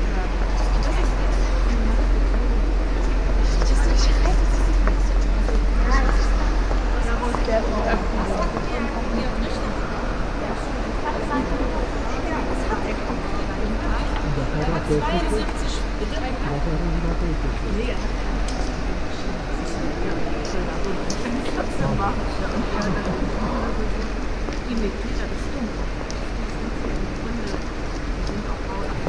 cologne, inside dom cathedral, richter window explanation
inside the dom cathedrale in the early afternoon. a guard explains the new richter window to a group of older people